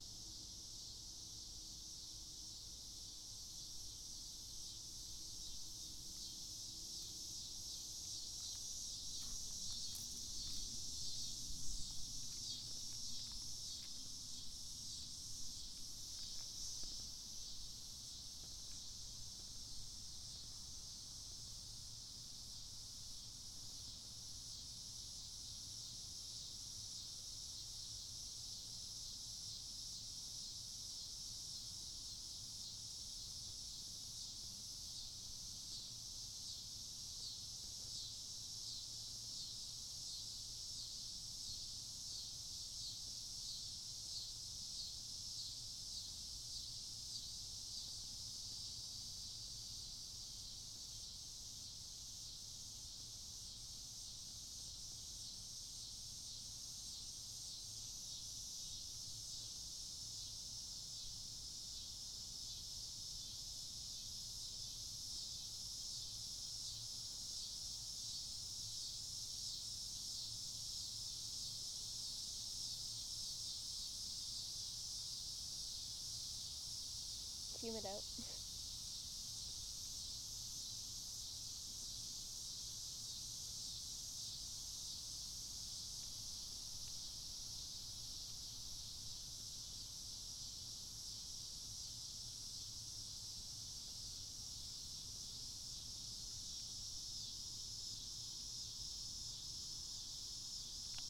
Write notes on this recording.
Cicada noises in the backyard of my parents’ house over Labor Day weekend. At 1:14 I say “it’s humid out.”